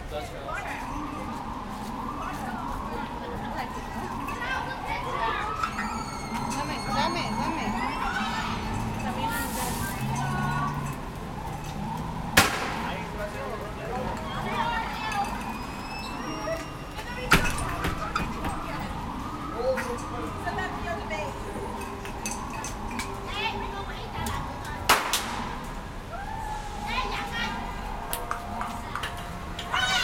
A group of kids throwing bang snaps in the street celebrating Year of the Dog.
Street sounds in Chinatown, NYC.
Zoom H6